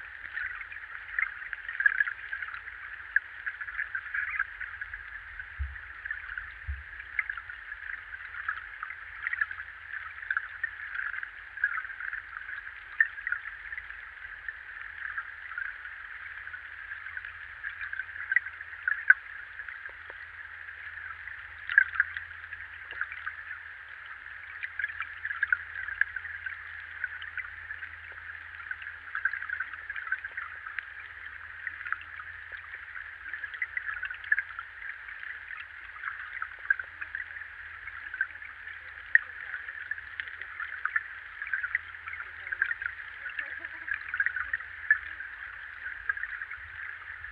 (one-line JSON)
{"title": "Vilnius, Lithuania, listening to underwaters", "date": "2019-10-18 18:50:00", "description": "hydrophones in the river Vilnia", "latitude": "54.68", "longitude": "25.30", "altitude": "100", "timezone": "Europe/Vilnius"}